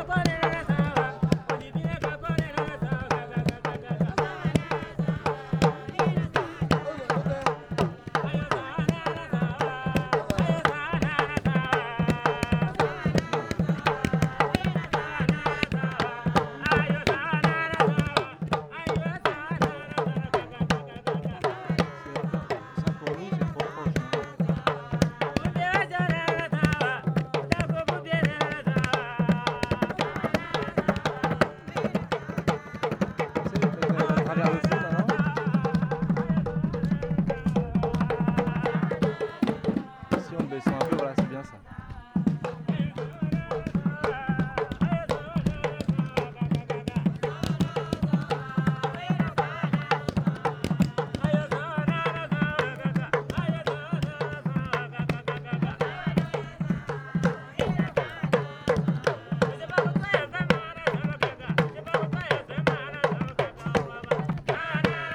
Sourou, Burkina Faso - traditionnal music
A party organised around the fire at night - dances and music